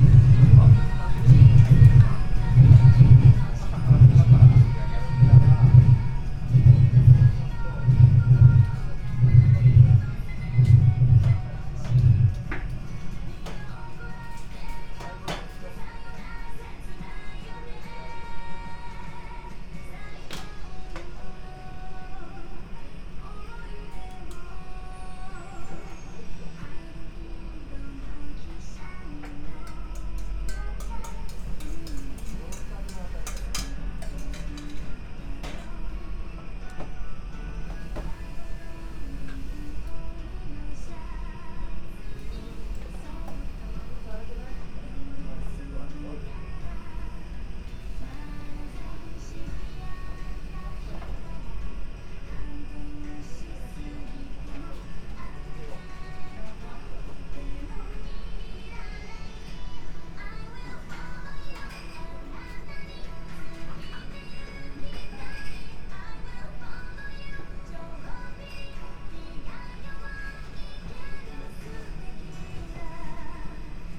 radio, spoken words, alternating with strong roar, no other customers at the moment, kitchen sounds - big pots, boiling waters and noodles ...
18 November 2013, 19:31